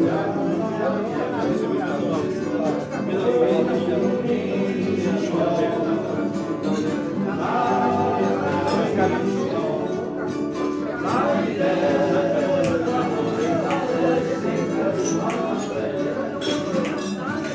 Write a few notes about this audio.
Every wednesday evening Bratislava‘s Tramps are gathering in some of the few remaining long standing pubs to celebrate their tradition, drinking and singing together.